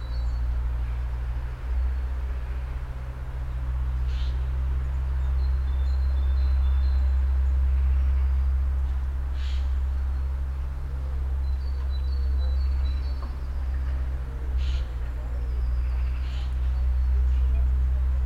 Martha-Stein-Weg, Bad Berka, Deutschland - Early Spring in Germany
Binaural recording of a feint sign of early Spring 2021 in a Park in Germany. Best spatial imaging with headphones.
Recording technology: BEN- Binaural Encoding Node built with LOM MikroUsi Pro (XLR version) and Zoom F4.
Landkreis Weimarer Land, Thüringen, Deutschland, 20 February 2021